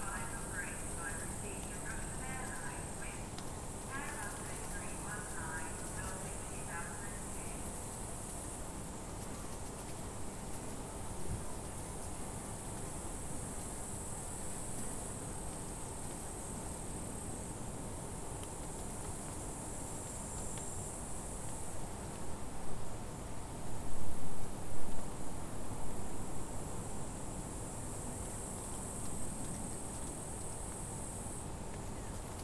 Ojaveere, Neeruti, Valga maakond, Eesti - Ambience @ Maajaam after the Wild Bits festival
Recorded inside a tent near Maajaam. Insects, birds, distant car sounds and airplanes. The voice is from Timo Toots's installation "Flight Announcer". Tascam DR-100mkIII with built in unidirectional microphones.